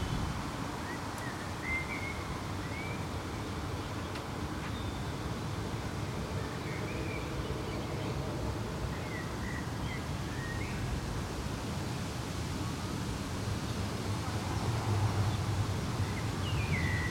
Rue de lEtoile, Uccle, Belgique - cars are back 2
cars are back and corona is not finished